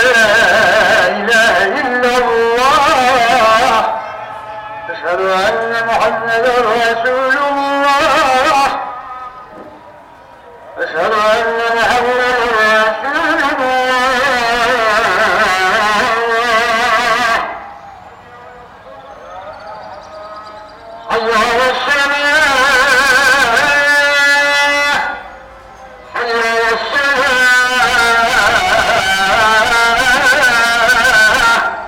muezzins istanbul - Istanbul, muezzins
choir of the muezzins of istanbul, evening prayer, may 2003. - project: "hasenbrot - a private sound diary"